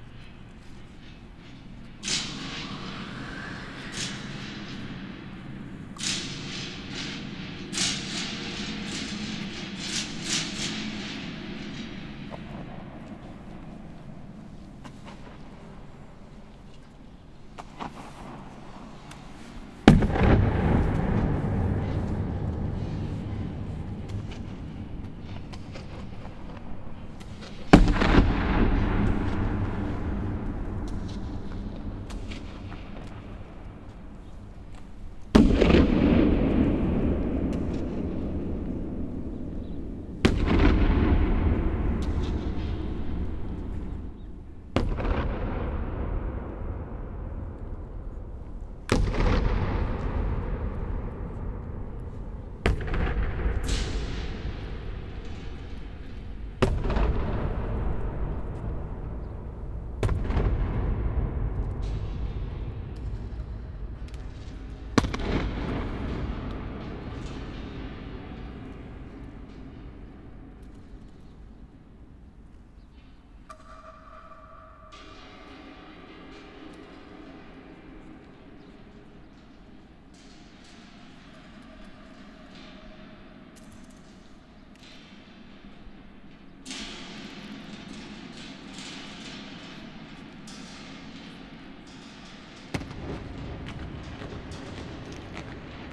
El Maarad, Tarablus, Libanon - oscar niemeyer dome tripoli activation
Activation of the natural acoustics of the 'experimental theater dome' at the Rachid Karami International Fair build designed by legendary Brazilian architect Oscar Niemeyer in 1963.